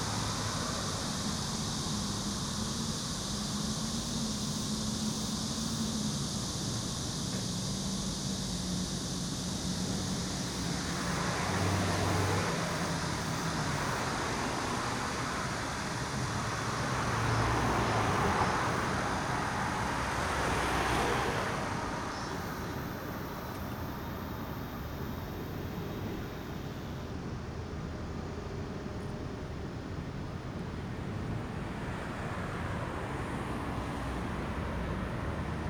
대한민국 서울특별시 서초구 서초대로 389 - Bus stop, Cicada
Bus stop, Cicada
버스정류장, 매미 울음소리